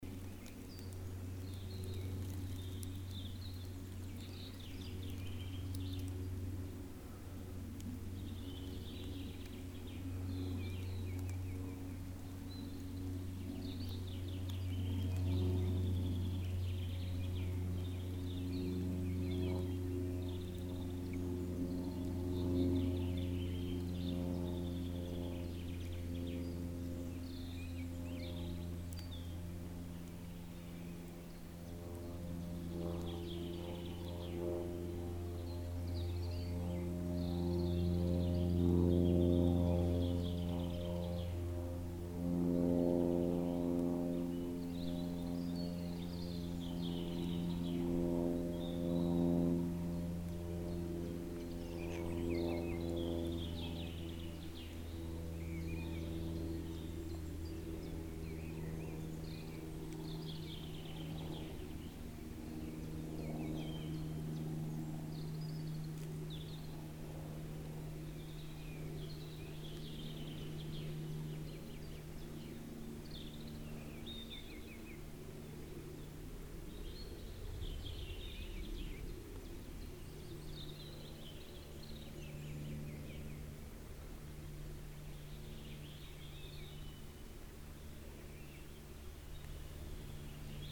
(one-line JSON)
{"title": "hoscheid, plane crossing valley - hoscheid, valley sound", "date": "2011-06-05 20:20:00", "description": "Walking uphill the forest path. Listening to the sound of the valley as a plane crosses the sky. Recorded in early spring in the early evening.\nHoscheid, Talklang\nDen Waldweg hinauf gehend. Auf das Geräusch des Tales lauschend, als ein Flugzeug am Himmel fliegt. Aufgenommen im frühen Frühling am frühen Abend.\nHoscheid, bruit de la vallée\nEn montant le chemin de forêt vers la colline. Écoutons le bruit de la vallée tandis qu’un avion traverse le ciel. Enregistré au début du printemps en début de soirée.\nProjekt - Klangraum Our - topographic field recordings, sound objects and social ambiences", "latitude": "49.95", "longitude": "6.06", "altitude": "323", "timezone": "Europe/Luxembourg"}